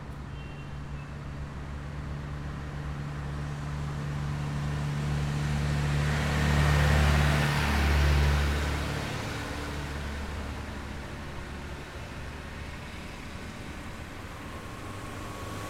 Cl., Medellín, El Poblado, Medellín, Antioquia, Colombia - Entre dos unidades
Se aprecian los sonidos de los vehículos que suben y bajan la loma
además de los pasos de algunas personas y algunas voces.
1 September 2022, 05:56